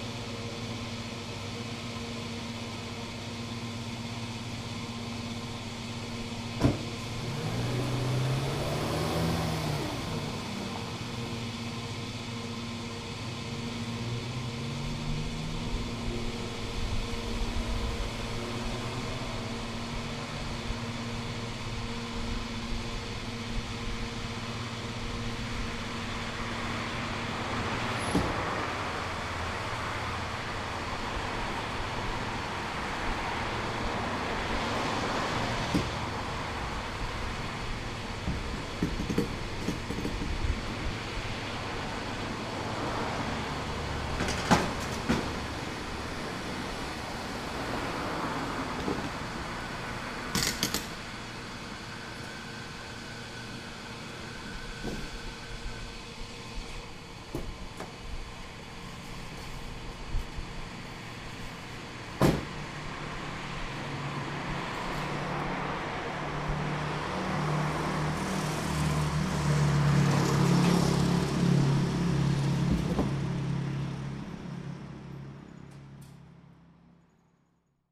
{"title": "Stafford, Brisbane, Filling up with Petrol.", "date": "2010-07-09 21:35:00", "description": "filling car from petrol pump, other cars coming and going, traffic driving past.", "latitude": "-27.41", "longitude": "153.02", "altitude": "29", "timezone": "Australia/Brisbane"}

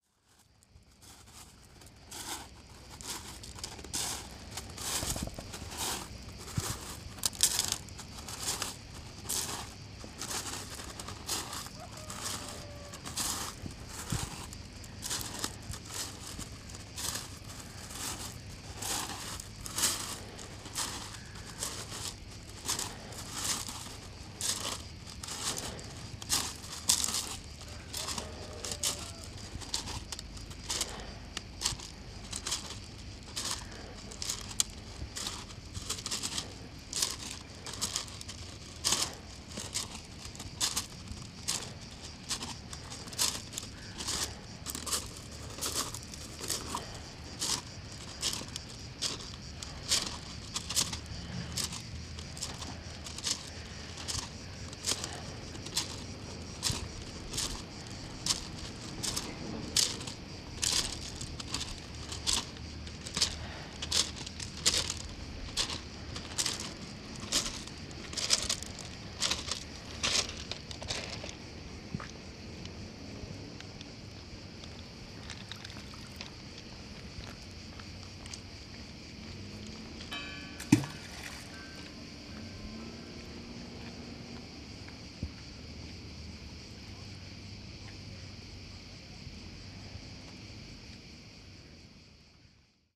{"title": "Prirovo, Vis, Croatia - early in the morning", "date": "2016-07-26 07:30:00", "description": "walking on the beach early in the morning", "latitude": "43.06", "longitude": "16.19", "altitude": "1", "timezone": "Europe/Zagreb"}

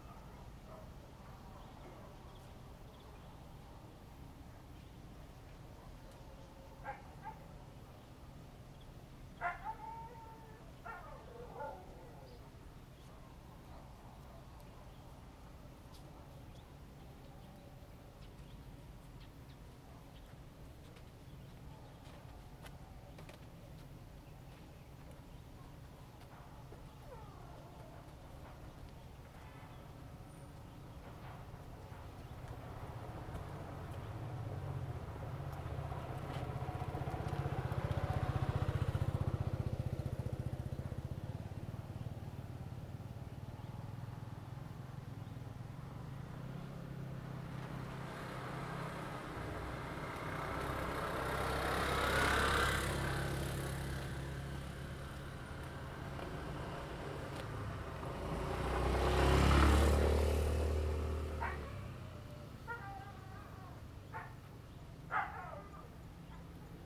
{"title": "Ln., Xinnong St., Yangmei Dist. - near the railroad tracks", "date": "2017-08-11 17:41:00", "description": "Birds sound, train runs through, Traffic sound, The plane flew through, Near the railroad tracks, Binaural recordings, Zoom H2n MS+XY", "latitude": "24.91", "longitude": "121.16", "altitude": "162", "timezone": "Asia/Taipei"}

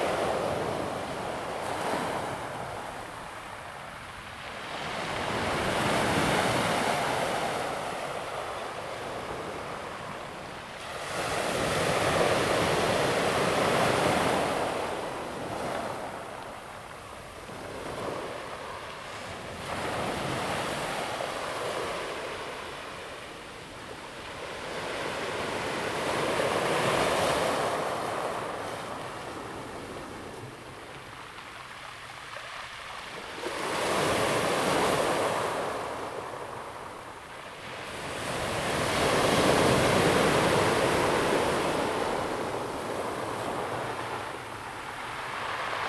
{"title": "加灣, Xincheng Township - the waves", "date": "2016-07-19 13:15:00", "description": "sound of the waves\nZoom H2n MS+XY +Sptial Audio", "latitude": "24.08", "longitude": "121.62", "altitude": "1", "timezone": "Asia/Taipei"}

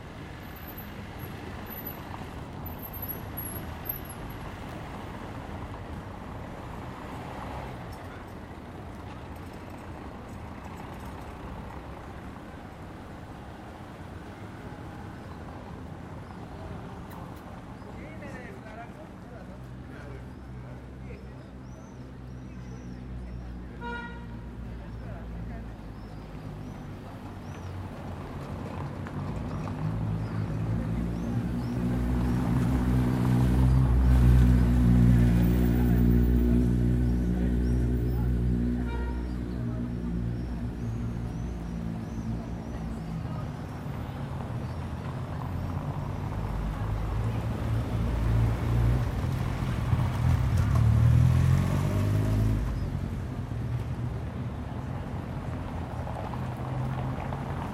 {"title": "Βασ. Κωνσταντίνου, Ξάνθη, Ελλάδα - Antika Square/ Πλατεία Αντίκα- 20:30", "date": "2020-05-12 20:30:00", "description": "Mild traffic, car honks, people passing by, talking.", "latitude": "41.14", "longitude": "24.89", "altitude": "88", "timezone": "Europe/Athens"}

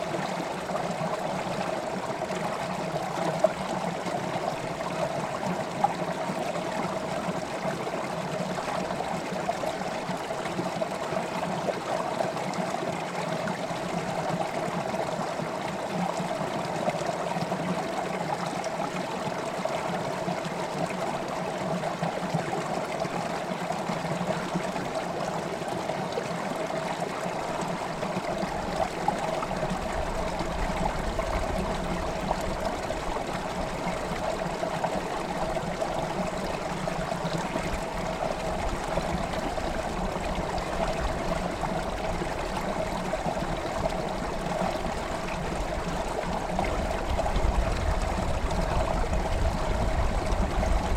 {"title": "Boulder, CO, USA - faster flowing water", "date": "2013-02-14 04:34:00", "description": "Around the bend near more intense water flow", "latitude": "39.94", "longitude": "-105.33", "altitude": "2031", "timezone": "America/Denver"}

